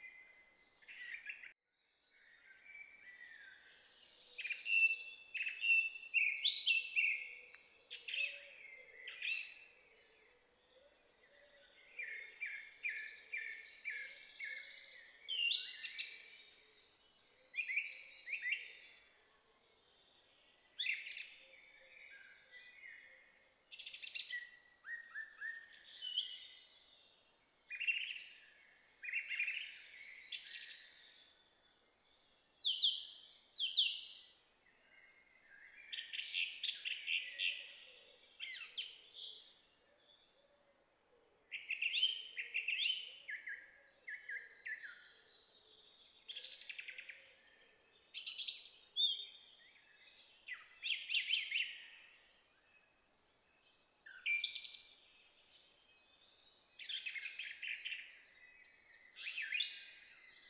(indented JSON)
{
  "title": "Lithuania, at Alausas lake",
  "date": "2011-05-29 18:10:00",
  "description": "last days of spring...summer is here",
  "latitude": "55.60",
  "longitude": "25.71",
  "altitude": "145",
  "timezone": "Europe/Vilnius"
}